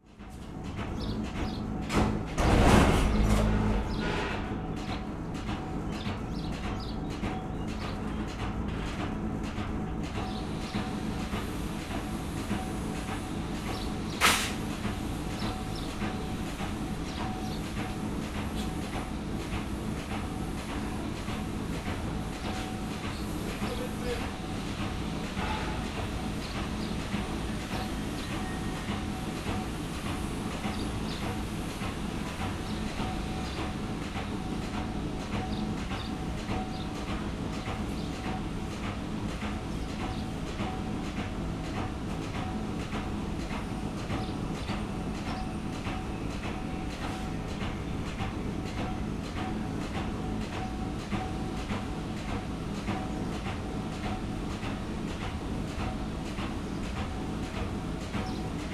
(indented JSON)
{
  "title": "Maribor, Obrezna ulica - metal workshop, punch",
  "date": "2012-05-30 11:58:00",
  "description": "a punch or something similar at work",
  "latitude": "46.56",
  "longitude": "15.62",
  "altitude": "279",
  "timezone": "Europe/Ljubljana"
}